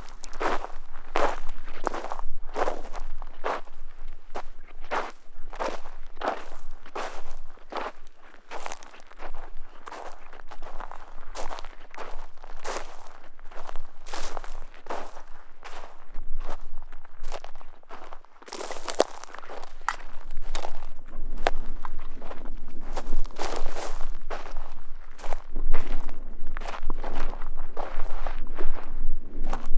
Farm north of Nablus, desert
project trans4m Orchestra